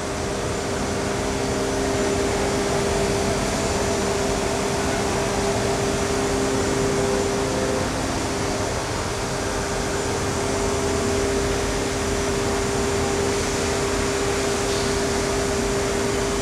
Tezno, Maribor, Slovenia - inside the factory
recorded from the gated entrance into a working factory building in the tezno district of maribor, with no workers to be seen